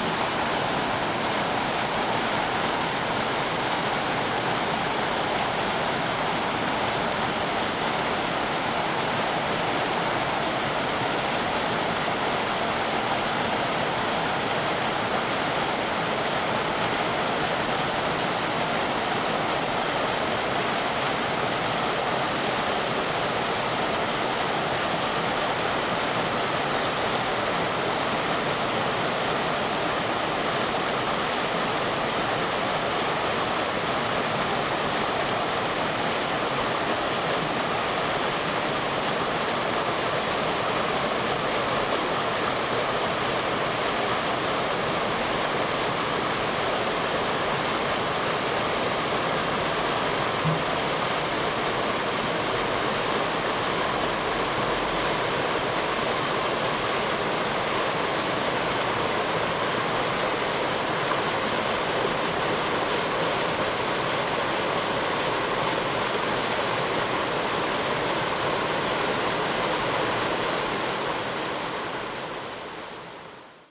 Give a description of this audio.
stream, small waterfall, Zoom H2n MS+ XY